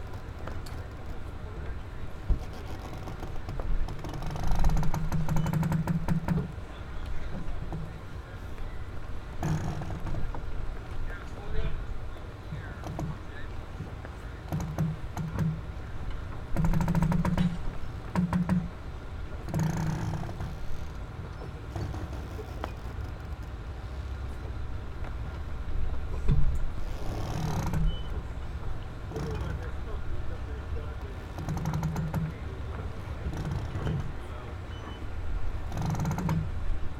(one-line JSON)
{"title": "Washington St, Newport, RI, USA - Dock Line", "date": "2019-09-01 11:00:00", "description": "Sound from a dock line.\nZoom h6", "latitude": "41.49", "longitude": "-71.32", "timezone": "America/New_York"}